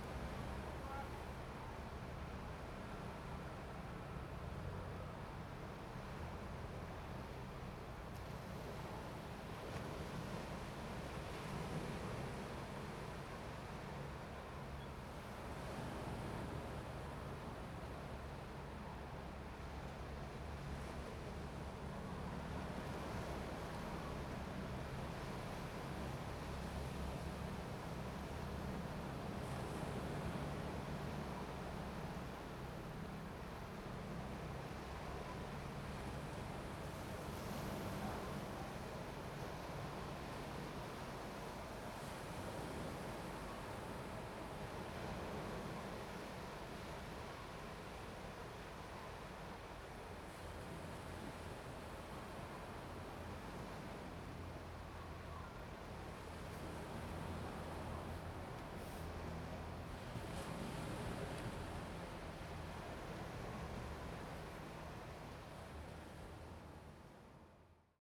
南寮村, Lüdao Township - sound of the waves
sound of the waves, Traffic Sound, Dogs barking
Zoom H2n MS +XY